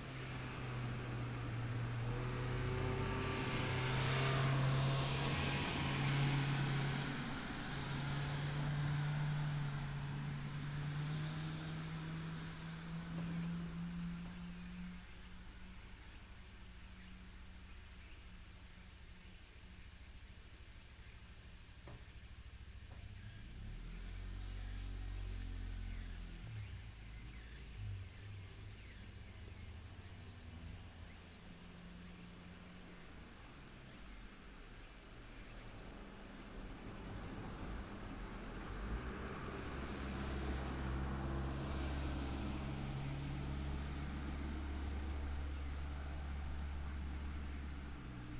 馬武督, Mawudu, Guanxi Township - Evening in the mountains
Evening in the mountains, Cicadas and birds
Hsinchu County, Taiwan, August 2017